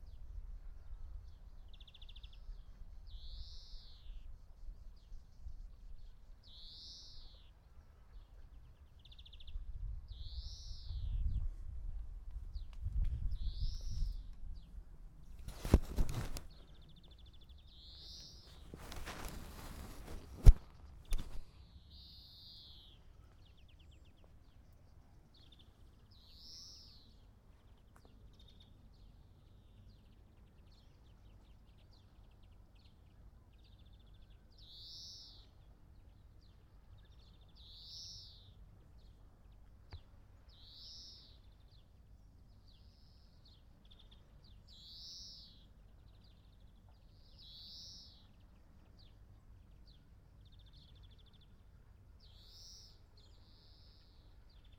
Paxi, Greece - Dawn above Lakkos Beach, Paxos, Greece
Recording from villa above Lakkos Beach on Paxos Island, Greece. Made on 22nd June 2016 possibly around 6am in the morning. Bird sounds include Green Finch and other unidentified birds.